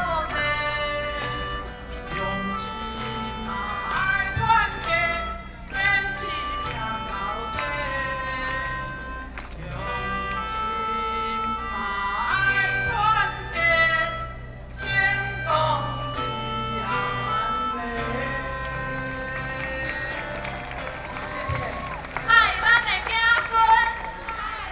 Ketagalan Boulevard, Zhongzheng District - Protest
Self-Help Association of speech, Sony PCM D50 + Soundman OKM II
Taipei City, Taiwan, 18 August 2013